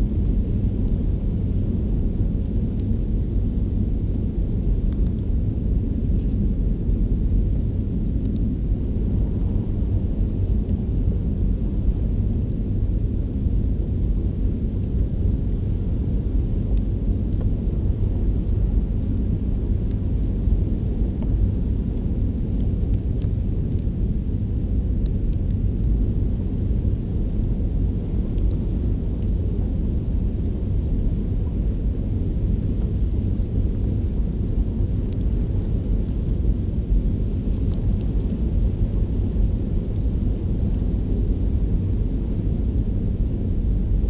Lithuania, Nemeiksciai, dark grass - dark grass
microphones in dried grass and the sound of roaring waters...
5 April 2012